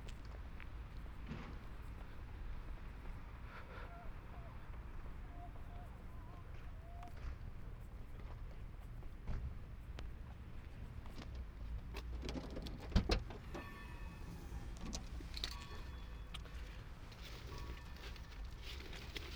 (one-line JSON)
{"title": "Galeria Lodzka, Lodz", "date": "2011-11-17 20:24:00", "description": "Aleksandra Chciuk, Bartek Talaga", "latitude": "51.76", "longitude": "19.47", "altitude": "207", "timezone": "Europe/Warsaw"}